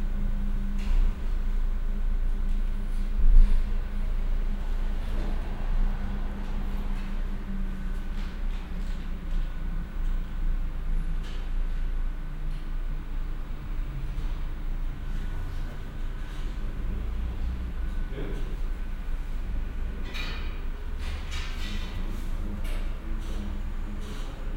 lech, arlberg, mountain gondola
The Lech-Oberlech mountain Gongola ground station recorded in the early afternoon in winter time. The sound of the engine taht moves the steel rope, som passengers entering the hall passing the cashier, A Gondola arriving.
international sound scapes - topographic field recordings and social ambiences
Austria